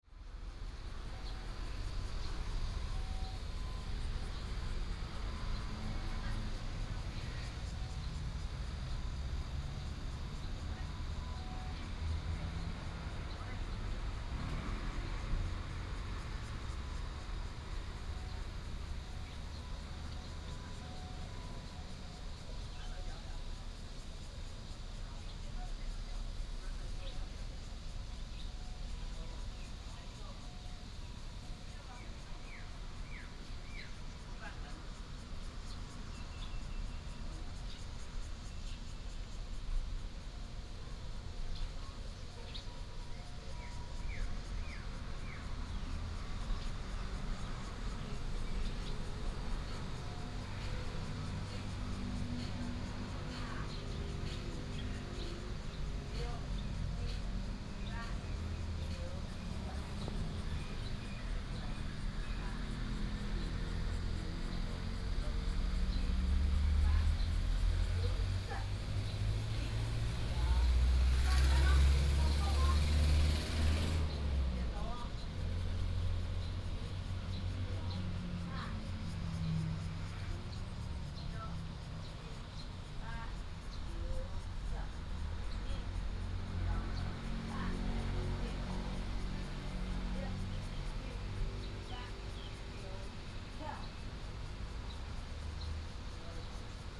金雞湖伯公, Pingzhen Dist., Taoyuan City - small village park
In the park, birds sound, traffic sound, Athletic field, Small temple, Old man and his little grandson
Pingzhen District, Taoyuan City, Taiwan, 14 August 2017, 18:24